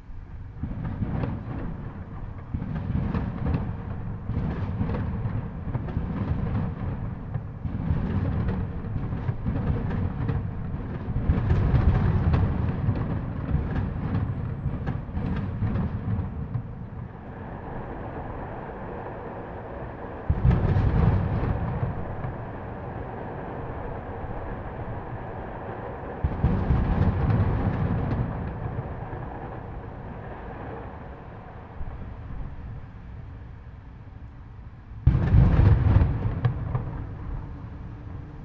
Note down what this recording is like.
heard some fireworks then quickly hung my mics out the window.